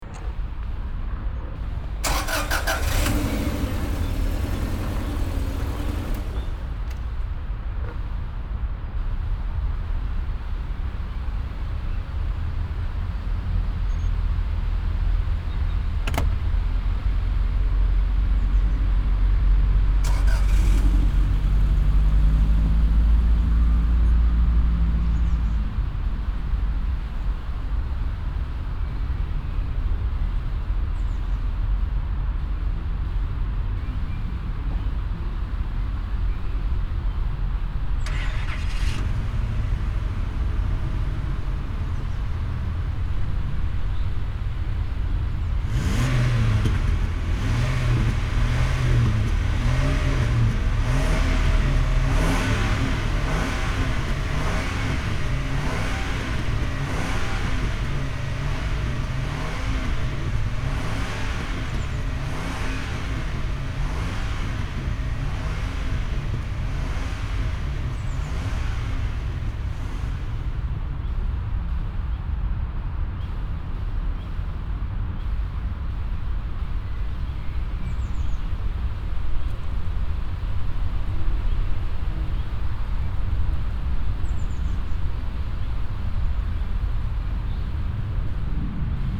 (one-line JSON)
{"title": "Frillendorf, Essen, Deutschland - essen, am schacht hubert, traffic drillground", "date": "2014-04-18 12:30:00", "description": "An einem Verkehrsübungsplatz. Die Klänge von startenden Fahrzeugen, das Öffnen und Schliessen von Türen, Vorbeifahrt langsamer PKW's an einem windigen, milden Frühjahrstag.\nProjekt - Stadtklang//: Hörorte - topographic field recordings and social ambiences", "latitude": "51.46", "longitude": "7.05", "altitude": "101", "timezone": "Europe/Berlin"}